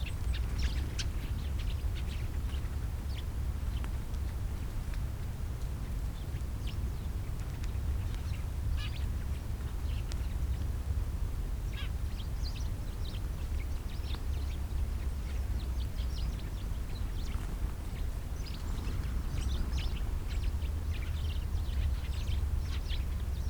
elder tree attracting various birds (more and more and more)
borderline: october 1, 2011
Berlin, Germany, 1 October 2011, 15:16